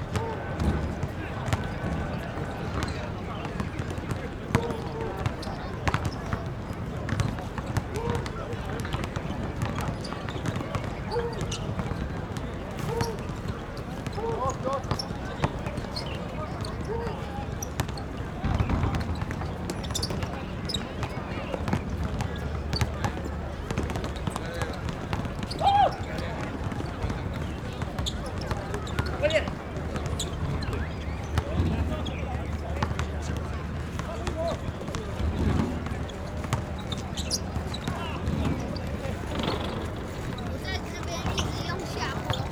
Erchong Floodway, New Taipei City - Play basketball
Play basketball, Aircraft flying through, Rode NT4+Zoom H4n